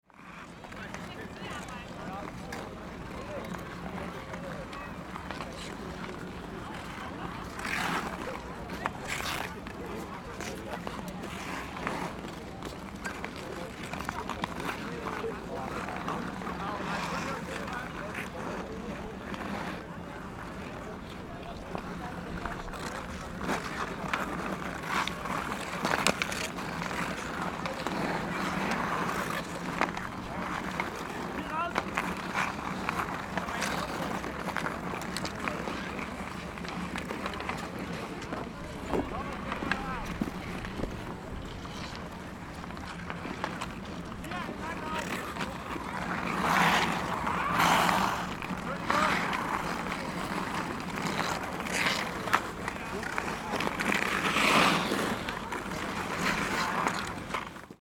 Aachener Weiher Köln
11.01.2009 15:00 Aachener Weiher: Eis, Schlittschuhläufer / little pond, ice, skater
11 January 2009